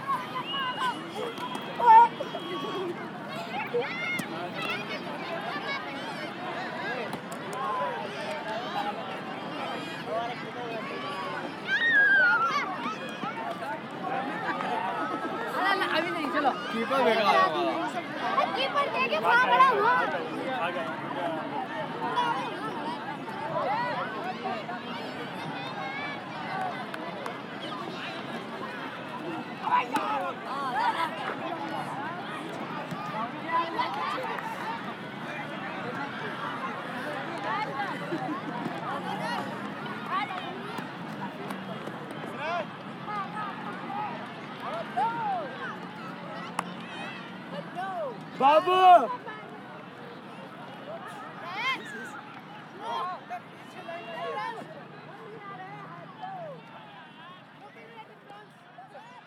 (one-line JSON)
{"title": "Rajpath, Rajpath Area, Central Secretariat, New Delhi, Delhi, India - 15 India Gate fields", "date": "2016-03-02 15:11:00", "description": "Sunday walk at India Gate fields", "latitude": "28.61", "longitude": "77.22", "altitude": "210", "timezone": "Asia/Kolkata"}